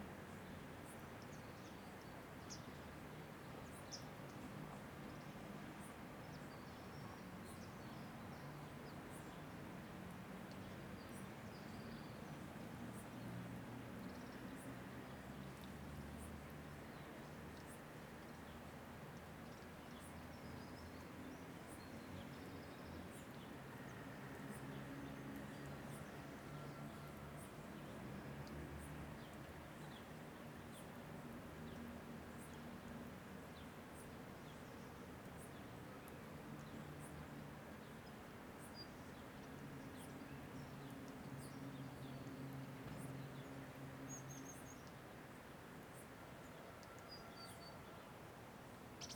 Molini di Triora IM, Italien - Molini di Triora, Via Case Soprane - In the morning
[Hi-MD-recorder Sony MZ-NH900, Beyerdynamic MCE 82]
30 August 2015, 06:54